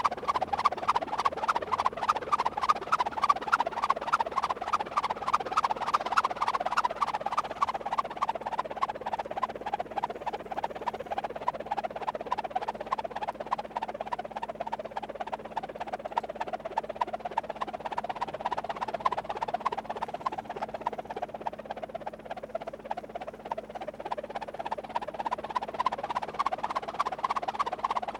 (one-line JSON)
{"title": "elastic wind wave at Flughfeld Aspern, Vienna", "date": "2011-08-15 16:20:00", "description": "elastic wind wave. thanks Milos!", "latitude": "48.23", "longitude": "16.50", "altitude": "153", "timezone": "Europe/Vienna"}